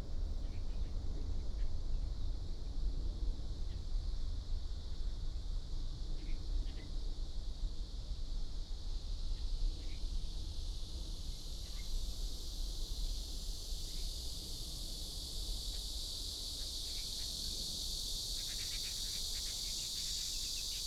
Near the airport runway, Cicada and bird sound, The plane flew through